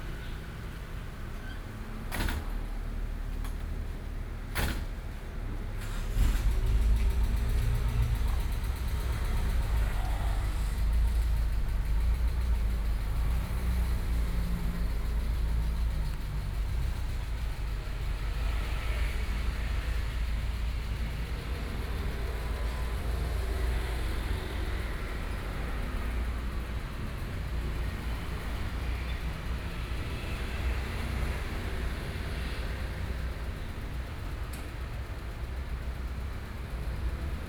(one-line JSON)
{"title": "Yangmei, Taoyuan - Traffic Noise", "date": "2013-08-14 12:06:00", "description": "Noon, the streets of the community, traffic noise, Sony PCM D50+ Soundman OKM II", "latitude": "24.92", "longitude": "121.18", "altitude": "195", "timezone": "Asia/Taipei"}